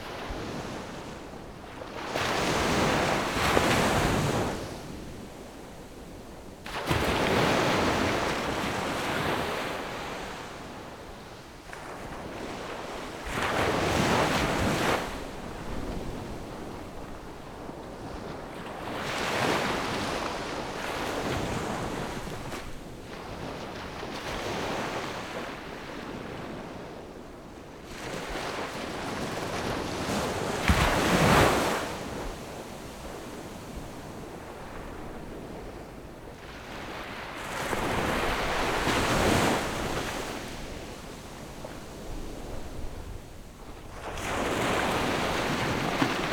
At the beach, Windy, Sound of the waves
Zoom H6+Rode NT4
Magong City, 201縣道